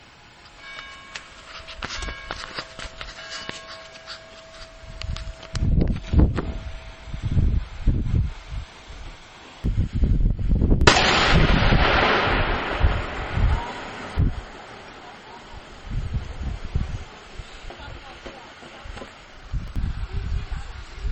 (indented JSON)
{
  "title": "Tour des mineurs, Sainte-Barbe 2011, 15h + tir de bombarde",
  "description": "Sainte-Marie-aux-Mines Echery Sainte-Barbe Bombarde Tour des mineurs",
  "latitude": "48.23",
  "longitude": "7.16",
  "altitude": "432",
  "timezone": "Europe/Paris"
}